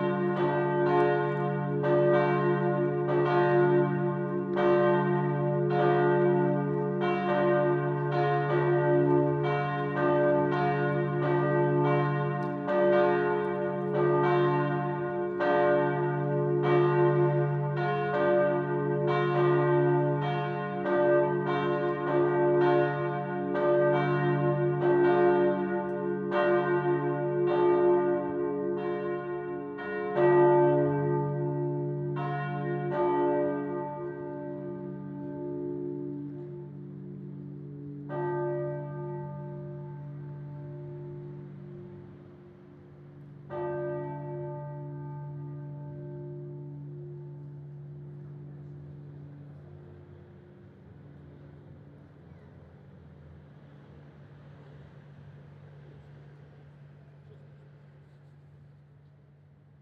{"title": "Corpus Christi Basilica, Kraków, Poland - (868 AB) Bells", "date": "2021-12-12 15:30:00", "description": "AB stereo recording of Corpus Christi Basilica at 3:30 pm on Sunday.\nRecorded with a pair of Sennheiser MKH 8020, 17cm AB, on Sound Devices MixPre-6 II.", "latitude": "50.05", "longitude": "19.94", "altitude": "209", "timezone": "Europe/Warsaw"}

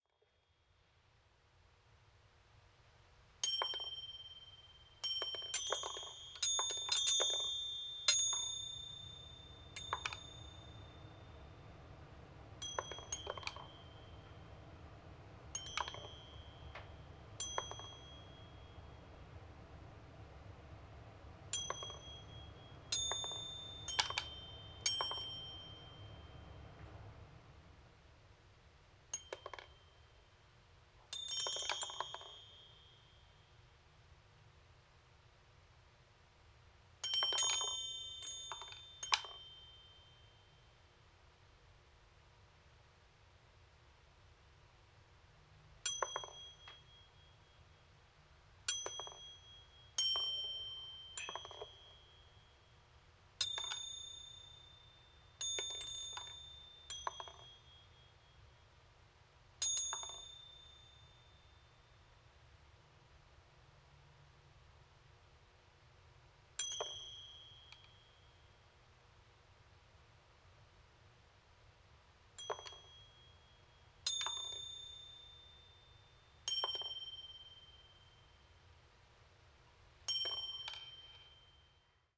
{"title": "Hale, Denver, CO, USA - Magnetic Tings", "date": "2015-11-23 17:30:00", "description": "Recorded with a pair of DPA 4060s and a Marantz PMD661.", "latitude": "39.73", "longitude": "-104.93", "altitude": "1624", "timezone": "America/Denver"}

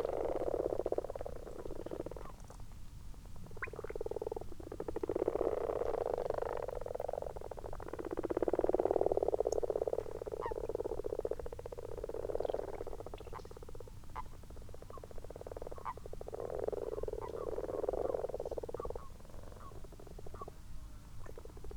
{"title": "Malton, UK - frogs and toads ...", "date": "2022-03-20 23:12:00", "description": "common frogs and common toads in a garden pond ... xlr sass on tripod to zoom h5 ... unattended time edited extended recording ... bird calls between 17:00 and 22:00 include ... tawny owl ... possible overflying moorhen ... plus the addition of a water pump ... half the pond is now covered with frog spawn ... the goldfish are in for a time of plenty ...", "latitude": "54.12", "longitude": "-0.54", "altitude": "77", "timezone": "Europe/London"}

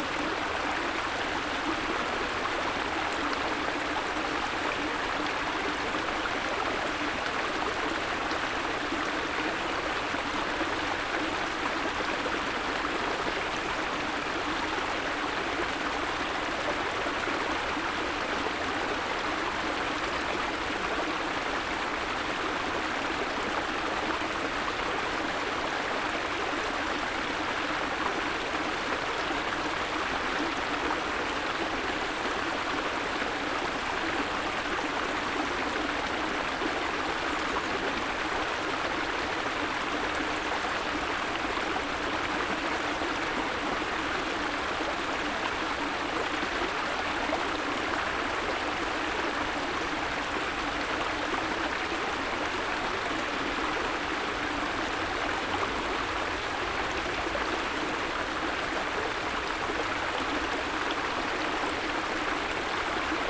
{"title": "angermünde, ring: bach - the city, the country & me: creek", "date": "2011-11-13 13:02:00", "description": "the city, the country & me: november 13, 2011", "latitude": "53.02", "longitude": "14.00", "altitude": "44", "timezone": "Europe/Berlin"}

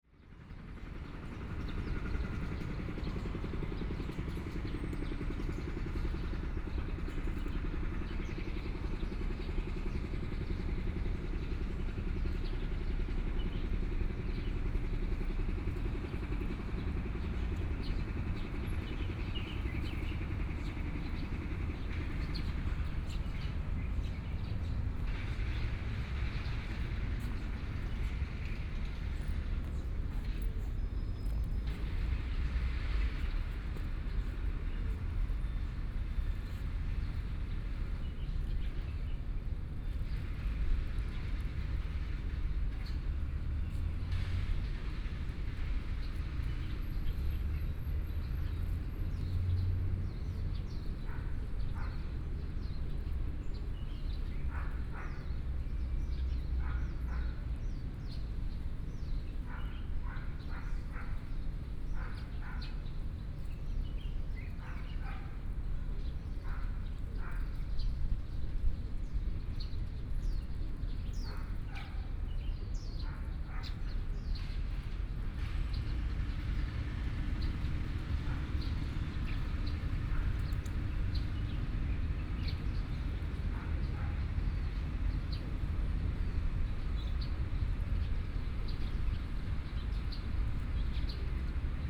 {"title": "二二八和平公園, Kaoshiung City - in the Park", "date": "2014-05-14 11:52:00", "description": "Birdsong, Sparrow, Pigeon, Traffic Sound, Road construction noise, Hot weather", "latitude": "22.63", "longitude": "120.29", "altitude": "2", "timezone": "Asia/Taipei"}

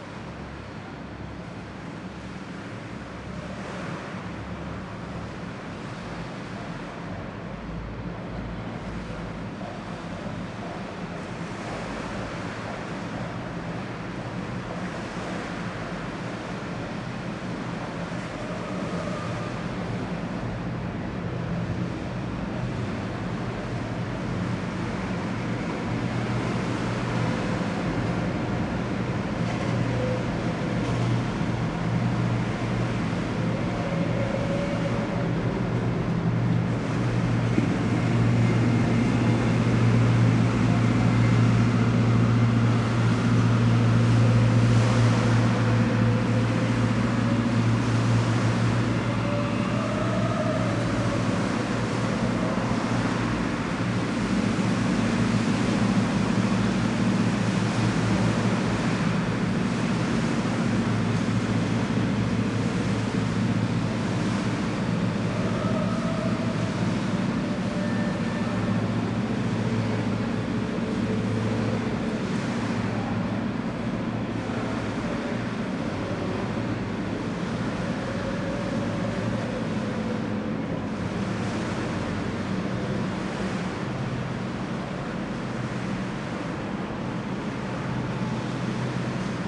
{"title": "Southbank, London, UK - Under Blackfriars Bridge", "date": "2016-08-30 18:30:00", "description": "Recorded with a pair of DPA4060s and a Marantz PMD661.", "latitude": "51.51", "longitude": "-0.11", "altitude": "22", "timezone": "Europe/London"}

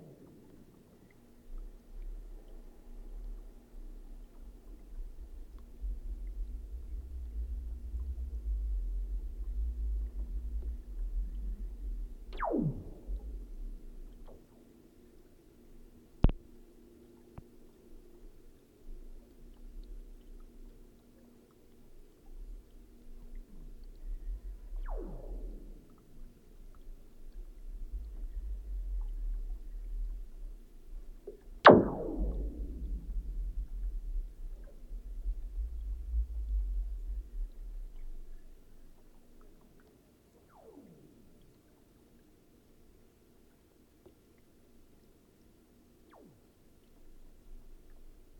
{"title": "Klondike Park Lake Ice Booms, Augusta, Missouri, USA - Ice Booms", "date": "2020-12-26 11:13:00", "description": "Hydrophone on frozen surface of lake. Ice booming. Water gurgling in ice as it is thawing. Mystery sound at 35s. Booms intensify at 55s. Labadie Energy Center hum.", "latitude": "38.58", "longitude": "-90.84", "altitude": "184", "timezone": "America/Chicago"}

